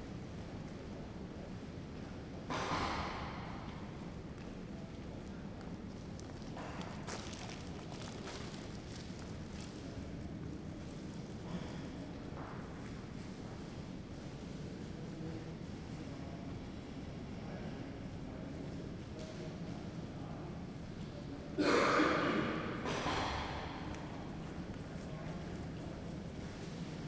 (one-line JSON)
{"title": "Messe Berlin, Halle7", "date": "2010-06-11 17:00:00", "description": "Berlin Messe, hall 7, hallway, steps, elevators, ambience", "latitude": "52.50", "longitude": "13.27", "altitude": "58", "timezone": "Europe/Berlin"}